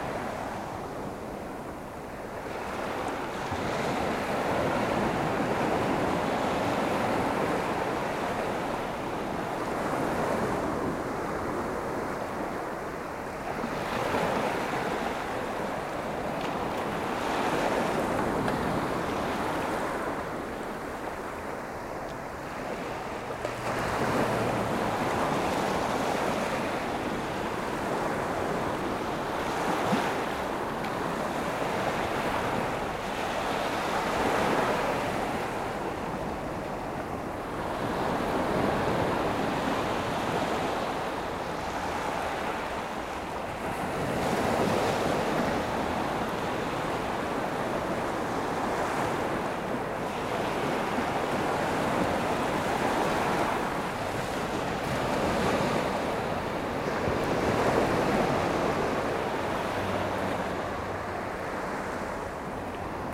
Frontignan, France - The beach of Frontignan
Sounds of the waves on the Frontignan beach. Recording made walking through the beach during 500 meters.
4 May 2016